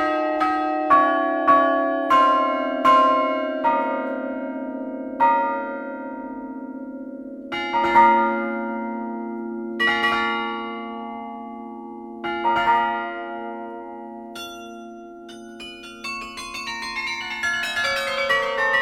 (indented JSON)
{
  "title": "Verviers, Belgique - Verviers carillon",
  "date": "2012-10-30 17:05:00",
  "description": "Recording of the Verviers carillon, played by Fabrice Renard. This is a poor instrument, needing a deep restauration.",
  "latitude": "50.59",
  "longitude": "5.85",
  "altitude": "163",
  "timezone": "Europe/Brussels"
}